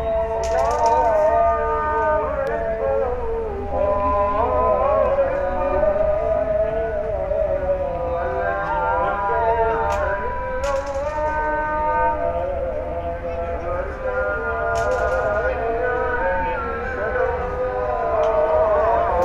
Istanbul, Fener - Molla Aşkı Kültür Parkı - Tea drinker playing backgammon while listening to the Ezan